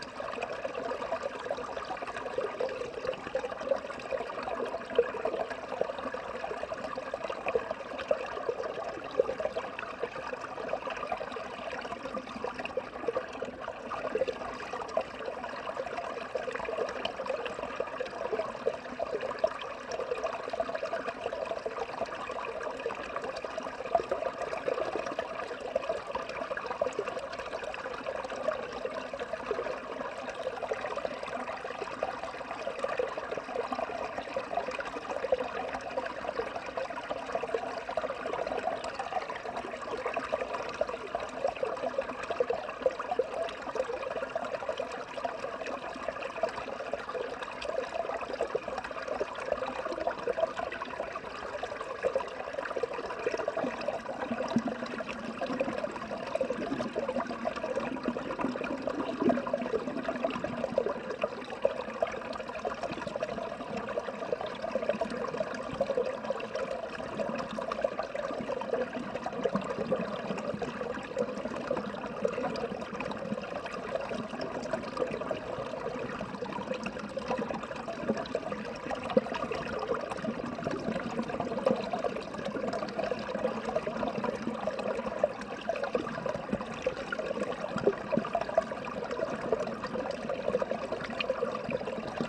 Lithuania, Utena, stream under ice
small river stram running under ice
26 February, 16:20